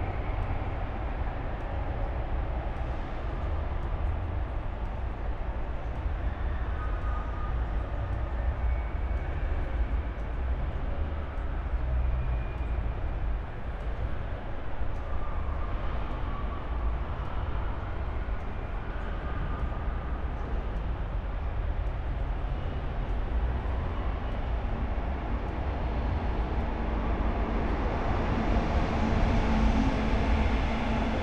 berlin, littenstr. - diffuse sound field in courtyard 2011
backyard revisited together with Peter Cusack. this is one of my seasonal favourite places. have to go there in spring too.
(tech note: SD702, rode nt1a AB 60cm, mics pointing to the buildings)